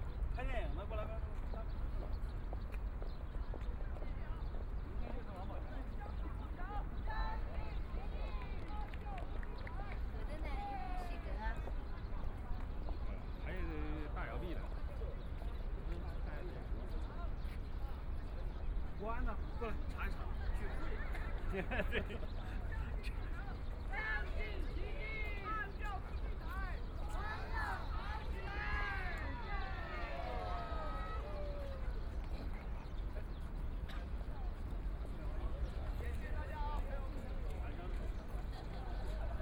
Lujiazui, Pudong New Area - in the Park
In the park plaza, Tourists from all over, Office workers lunch break, Binaural recording, Zoom H6+ Soundman OKM II
Pudong, Shanghai, China